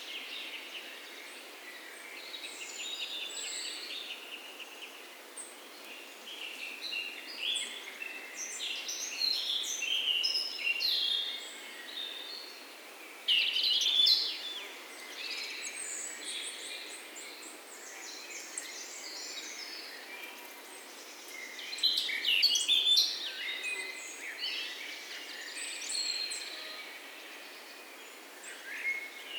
Kongens Lyngby, Danmark - Birds, Spring Symphonie
The sound of energetic and happy birds, early spring, some bypassing flyes now and then. Øivind Weingaarde.
Recorded with zoom H6 and Rode NTG 3
Region Hovedstaden, Danmark, 10 May